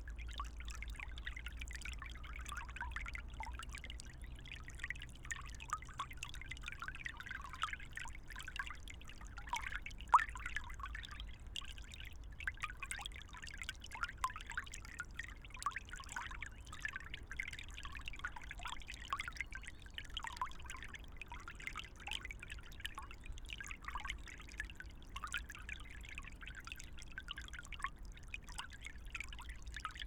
Eichepark, Berlin, Deutschland - river Wuhle, water flow, aircraft
sound of the river Wuhle, about 1km after its source. departing aircraft crossing from th east.
(SD702, AT BP4025)
Berlin, Germany, 28 March 2015, ~17:00